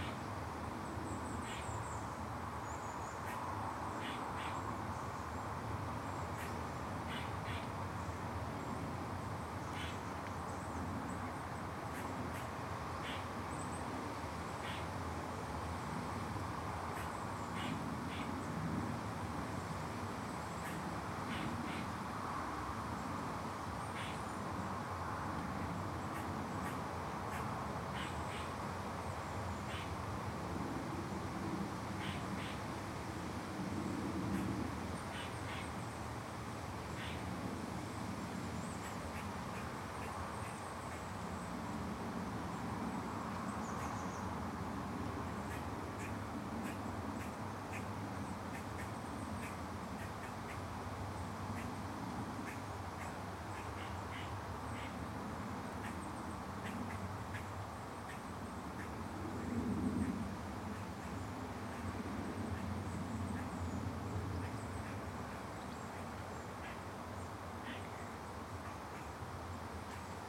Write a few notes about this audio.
This is the sound in a clearing in the Glen of the Downs, of different birds, aeroplanes and the traffic on the N11. There was a road protest in this nature reserve at which I briefly lived in the Autumn/Winter of 1997 and we had a reunion this year to mark 20 years since the first protest tent went up. I also returned to the Glen one Autumn in the early 00s to re-record my vivid memories of having lived there, all of which were writ in sound. You can hear how loud the road is. Recorded with sound professional binaural microphones and an R-05.